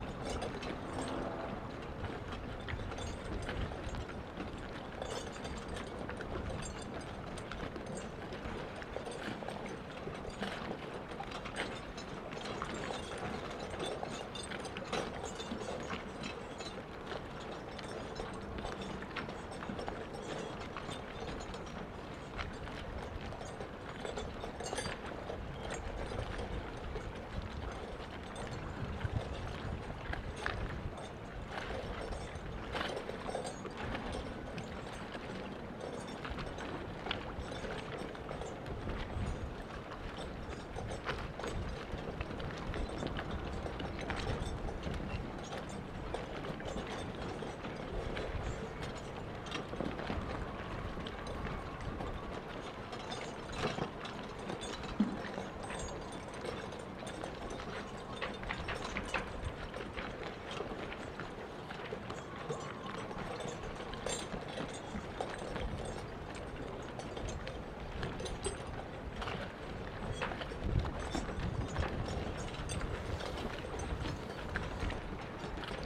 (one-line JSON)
{"title": "Konsul-Smidt-Straße, Bremen, Germany - Jangling masts", "date": "2020-05-14 12:00:00", "description": "The jangling sounds of boat masts in the wind.", "latitude": "53.09", "longitude": "8.78", "altitude": "7", "timezone": "Europe/Berlin"}